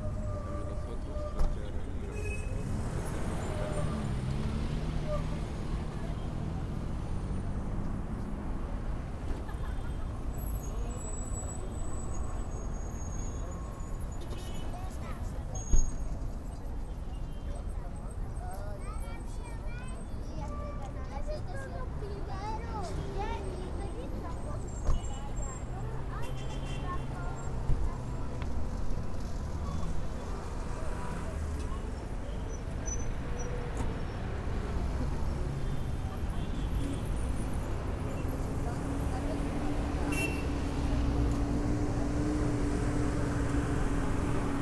Estadio Hernando Siles - Hernando Siles
por Fernando Hidalgo